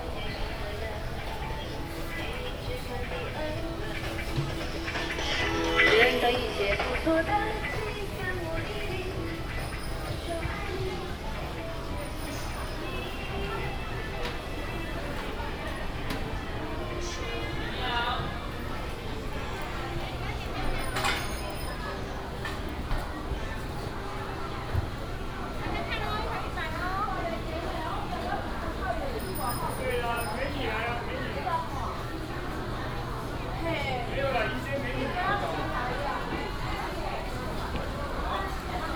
環東黃昏市場, Zhongli Dist., Taoyuan City - traditional market
Traditional market, traffic sound
Taoyuan City, Taiwan, 1 August, ~17:00